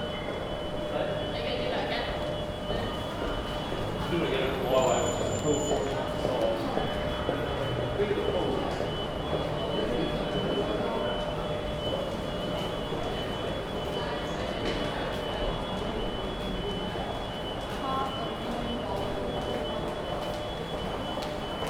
neoscenes: World Square SE entrance